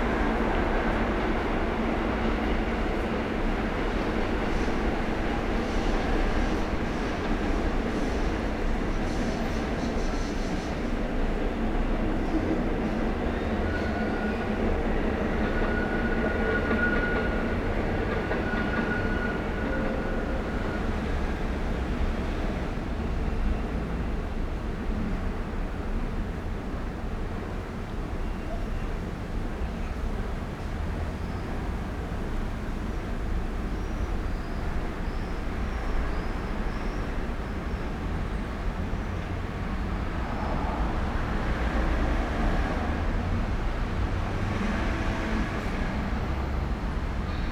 Poznan, Jerzyce, at the office, small larder - out of the window of small larder
street ambience recorded from a small window in a back room in the office. heavy traffic, cars, trams, all trains heading north and east swing on the tracks nearby